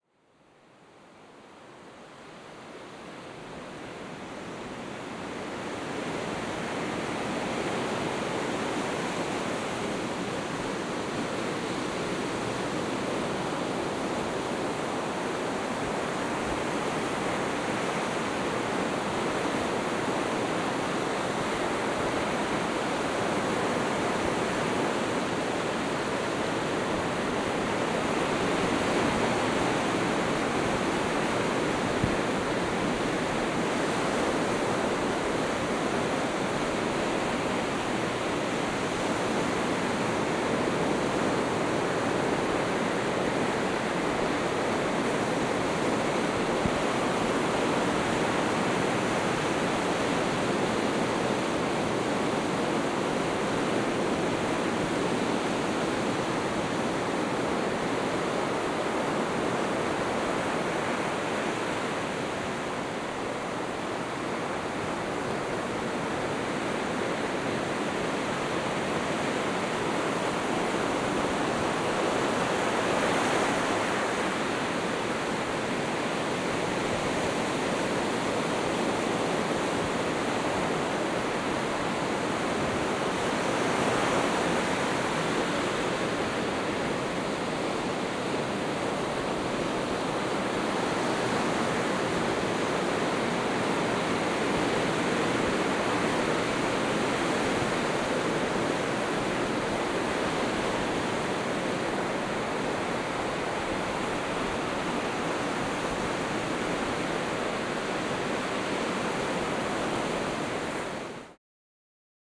Scarborough, UK - Autumn, North Bay, Scarborough, UK
Binaural field recording of North Bay, Scarborough, UK. A very windy day with rough sea.
13 October 2012, 06:00